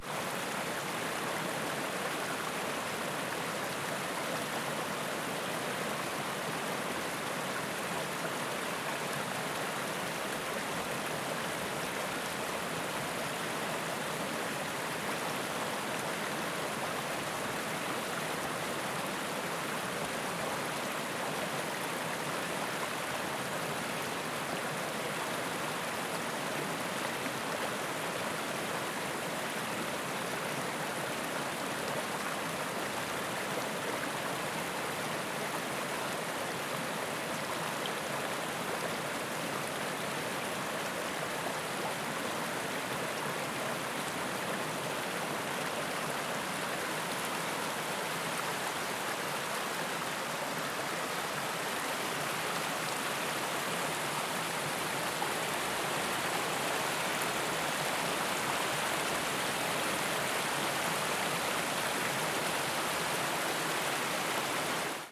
La Côte-de-Gaspé Regional County Municipality, QC, Canada - Steam with bubble at the Forillon park
Steam with bubble at the Forillon park
REC: Zoom H4N